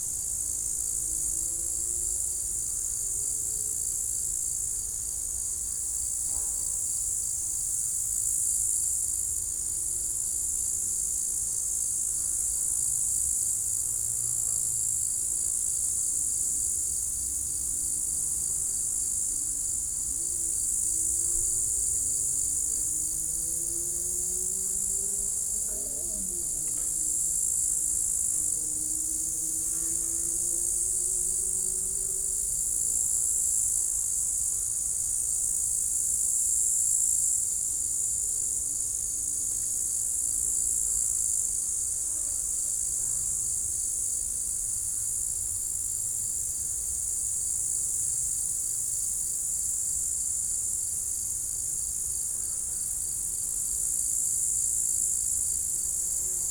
Col du sapenay, Entrelacs, France - Prairie altitude
Une prairie ensoleillée au col du Sapenay, polyrythmie naturelle des stridulations, grillons, criquets, sauterelles. De temps à autre utilisée comme pâturage pour les vaches.
France métropolitaine, France, 10 July